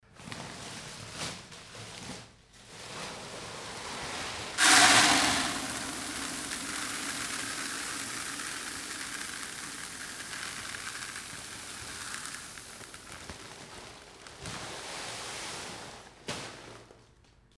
heinerscheid, cornelyshaff, brewery - heinerscheid, cornelyshaff, brewery, filling in the malt
Now we follow the process of brewing beer acoustically - starting with the sound of malt that is filled in a grinder.
Heinerscheid, Cornelyshaff, Brauerei, Malzfüllung
Jetzt verfolgen wir den akustischen Prozess des Bierbrauens, angefangen mit dem Geräusch, das entsteht, wenn Malz in die Schrotmühle gefüllt wird.
Heinerscheid, brasserie, remplissage du malt
Nous suivons maintenant acoustiquement le processus de brassage de la bière – en commençant par le son d’un moulin se remplissant de malt.